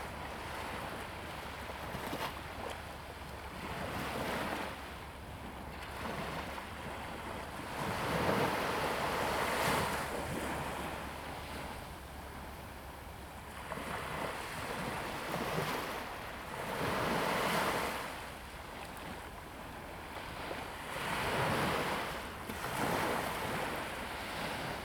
At the beach, sound of the Waves
Zoom H2n MS+XY